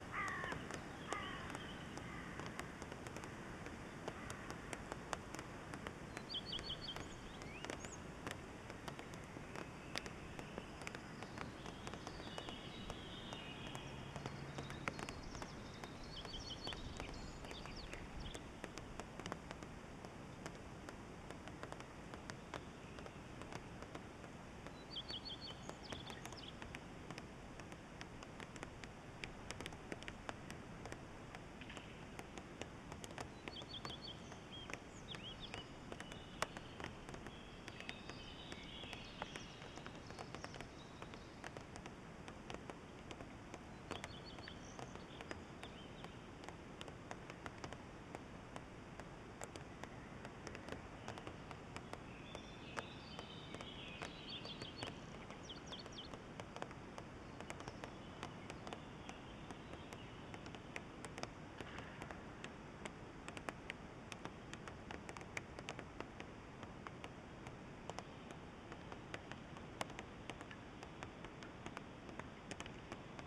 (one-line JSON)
{"title": "Unnamed Road, Bremen, Germany - Dripping water", "date": "2020-05-12 15:00:00", "description": "The water dripping onto a wooden structure.", "latitude": "53.22", "longitude": "8.50", "altitude": "17", "timezone": "Europe/Berlin"}